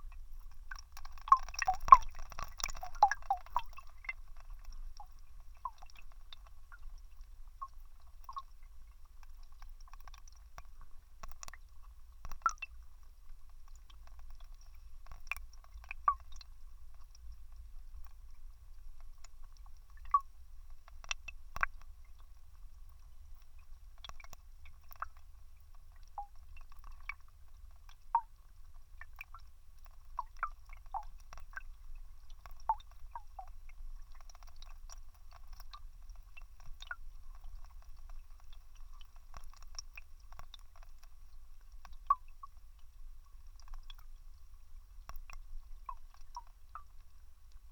Rokiškis, Lithuania. under little bridge
Snowy day. Wet melting snow falls down from the bridge. Hydrophone recording.